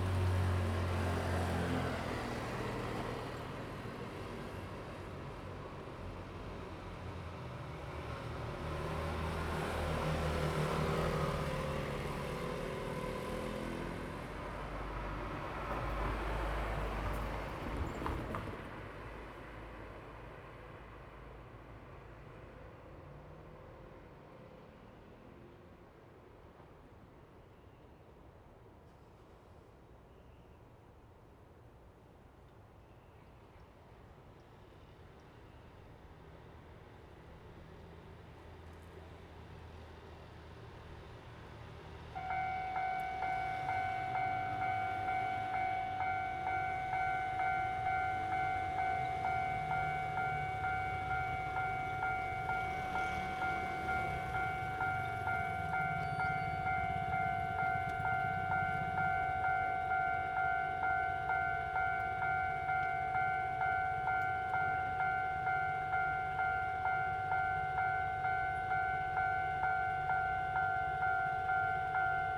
On the railroad crossing, The train runs through, Traffic sound
Zoom H2n MS+XY